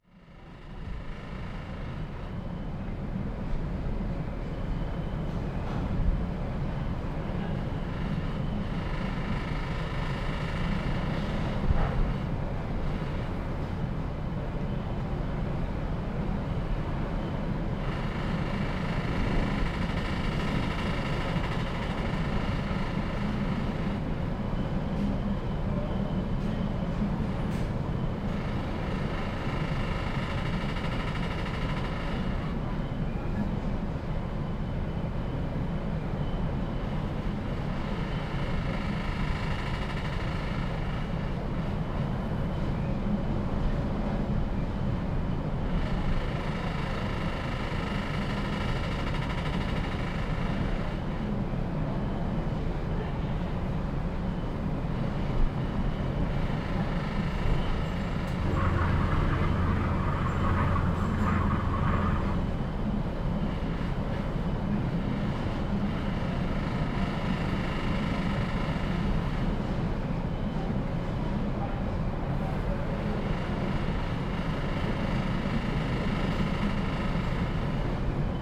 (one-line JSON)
{"title": "ferry Helsinki-Tallin, windy", "date": "2017-10-16 13:20:00", "description": "little storm overboard, 7 deck", "latitude": "59.79", "longitude": "24.84", "timezone": "Europe/Tallinn"}